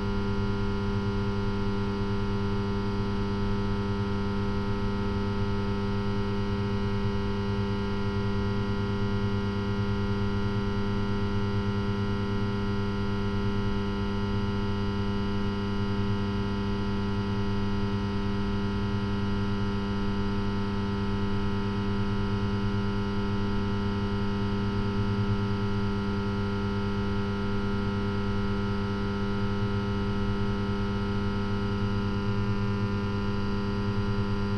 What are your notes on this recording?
Inside the SEO powerplant. Walking upwards a steep staircase from the Kaverne to the transformator tunnel. The sound of electricity. Stolzemburg, SEO, Wasserkraftwerk, Im SEO-Wasserkraftwerk. Eine steile Treppe aufwärts von der Kaverne zum Transformatorentunnel. Das Geräusch von Elektrizität. Stolzembourg, SEO, usine hydroélectrique, À l’intérieur de l’usine électrique de SEO. Montée d’un escalier raide depuis la caverne jusqu’au tunnel du transformateur. Le bruit de l’électricité.